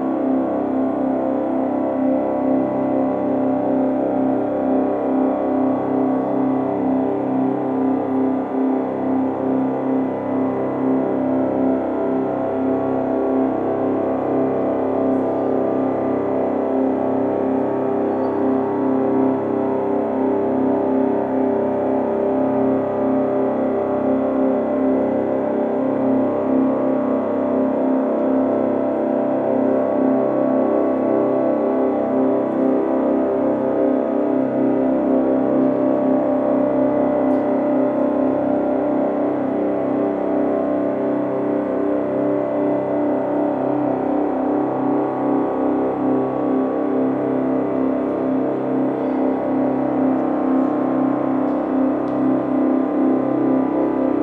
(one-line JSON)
{"title": "Kapucijnenvoer, Leuven, Belgien - Leuven - Anatomisch Theater - sound installation", "date": "2022-04-23 16:50:00", "description": "Inside the historical dome building - the sound of a sound installation by P. Sollmann and K. Sprenger entitled \"modular organ system\" - a part of the sound art festival Hear/ Here in Leuven (B).\ninternational sound scapes & art sounds collecion", "latitude": "50.88", "longitude": "4.69", "altitude": "24", "timezone": "Europe/Brussels"}